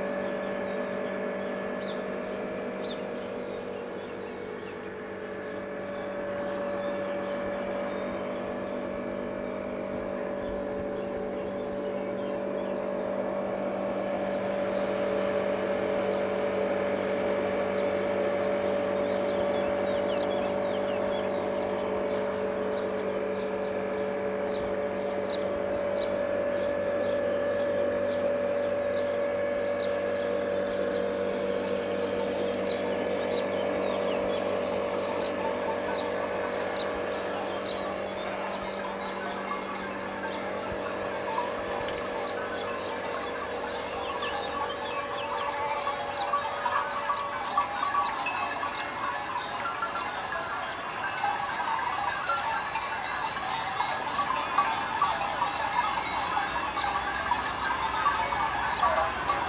Tufo, Avellino, Italy - past tracs
The recording was made on the train between Benevento and Avelino, from its stations and surrounding landscapes. The rail line was shut down in October 2012.
Tufo Avellino, Italy, 21 July, ~14:00